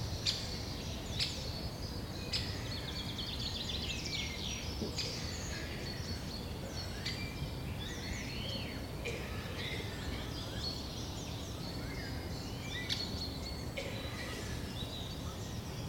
Ermelo, Nederland - Near the Ermelose heide
Random recording in a small forest near Ermelo.
Internal mics of a Zoom H2.